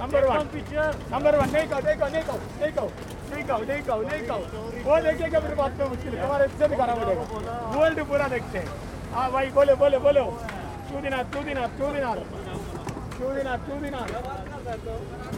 Government Ave, Manama, Bahreïn - Central market - Manama - Bahrain
Marché central de Manama - Bahrain
"Orange ! 2 Dinar !"